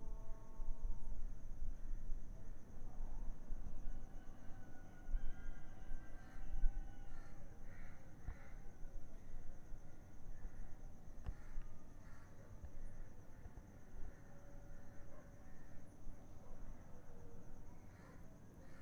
Mikocheni B, Dar es Salaam, Tanzania - Early morning from the roof of CEFA, suburban Dar es Salaam
Just before sunrise, recorded with a zoom X4 from a rooftop. Nice local ambiances of the neighbourhood, with several muezzin singing in the distance, some roosters and the sounds of the streets making up around.
22 October 2016, 04:44